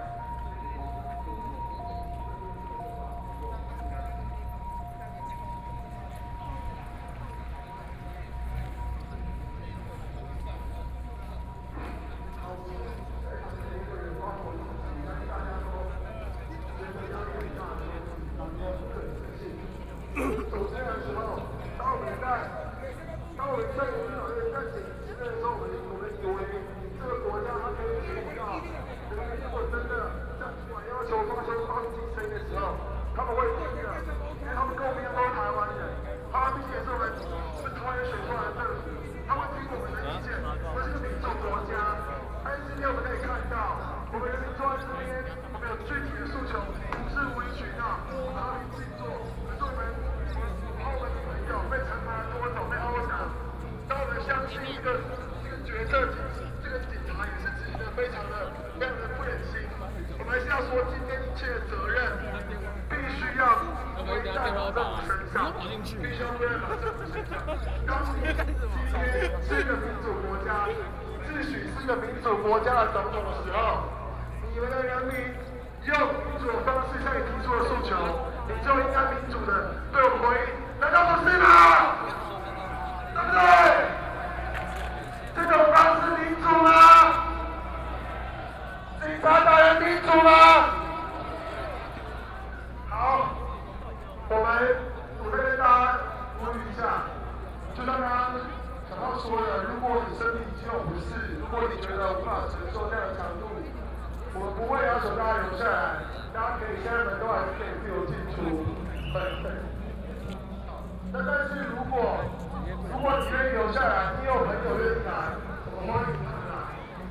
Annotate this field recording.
Protest, University students gathered to protest the government, Occupied Executive Yuan, Binaural recordings